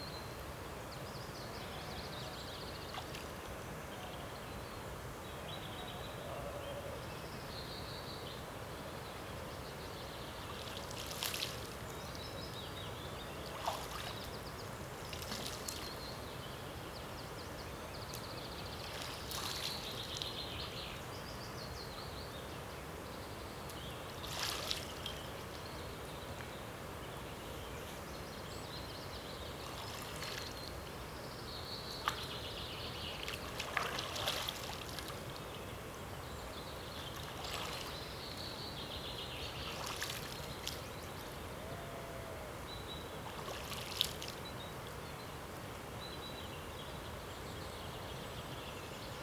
Skinny dip, Loch Awe, Scotland